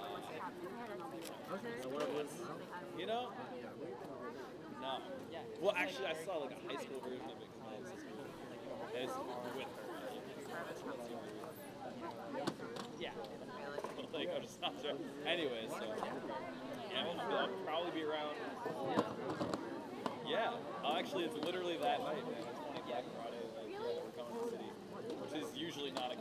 New Paltz, NY, USA - Old Main Quad
The Old Main Quad at SUNY New Paltz is a place where many students and the public will relax and enjoy the outdoors. This recording was taken during a student run organization function called, "Fall Fest". The recording was taken using a Snowball condenser microphone with a sock over top to cut the wind. It was edited using Garage Band on a MacBook Pro.
October 2016